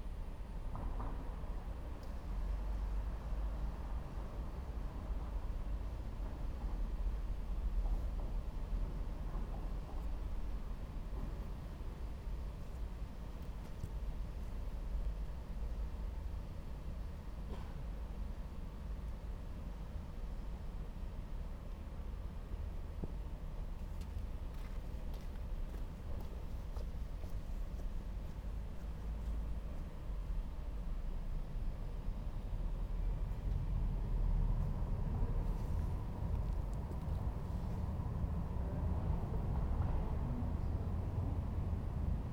Sint-Jans-Molenbeek, Belgium - Tram manoeuvres at the tram station
This is the sound of a tram being slowly driven out of the tram station, then re-oriented and driven back in along a different set of tracks, to a different bay. This is a beautiful street to hear many sounds of the trams - not only their movement in and out of the station, but also the sounds of cars passing over the tracks that the trams use, creating rhythmic patterns. Recorded with onboard EDIROL R09 microphones, with a little bit of space before the tram approaches, to get a sense of the ambience of the space.
24 March 2013, ~18:00